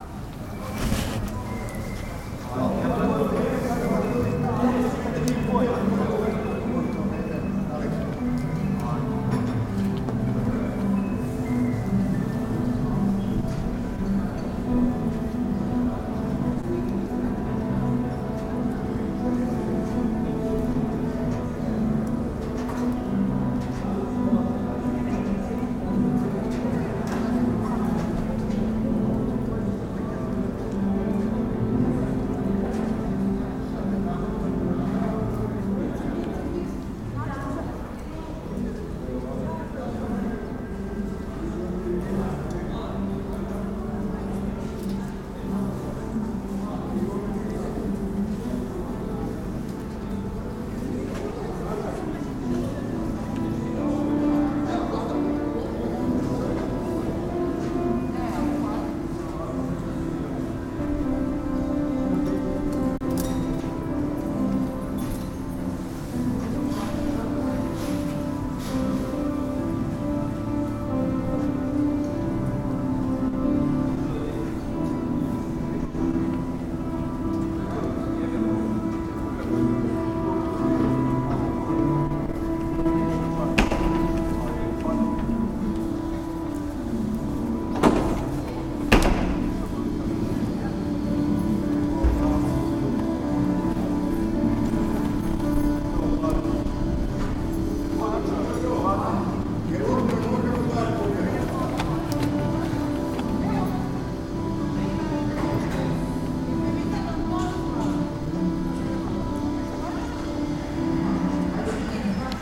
The square is surrounded by the cathedral Sv. Stosije/ Saint Anastasia, two Cafes and the Theological Seminar. Inside the Seminar someone is playing piano, noise from the cafes, groups of people crossing the square, muttered conversations.